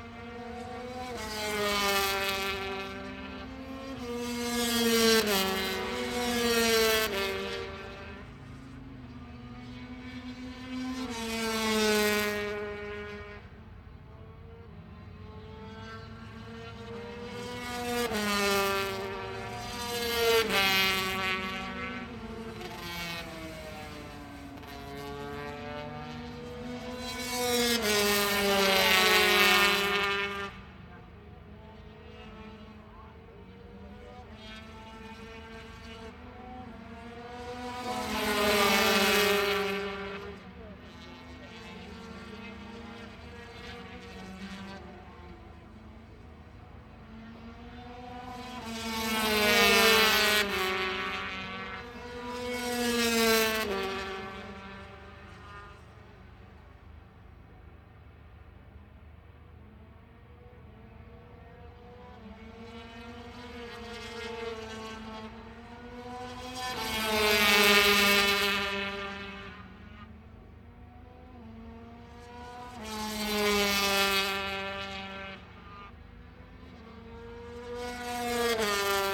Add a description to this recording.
british motorcycle grand prix 2006 ... free practice 125 ... one point stereo mic to minidisk